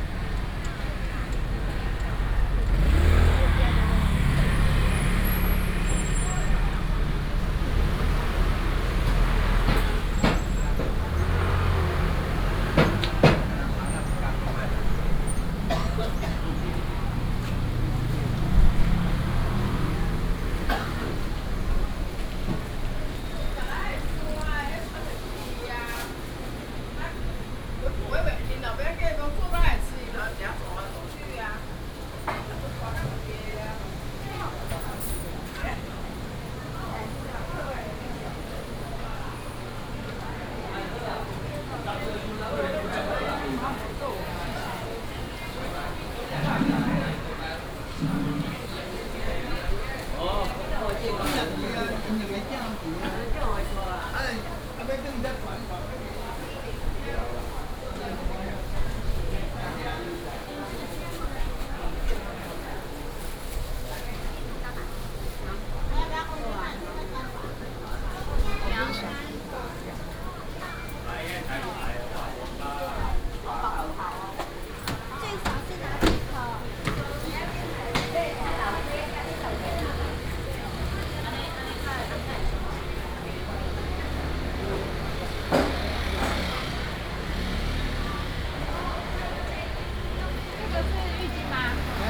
西屯市場, Xitun Dist., Taichung City - Traditional market
Traditional market, Walking in the traditional market area, Binaural recordings, Sony PCM D100+ Soundman OKM II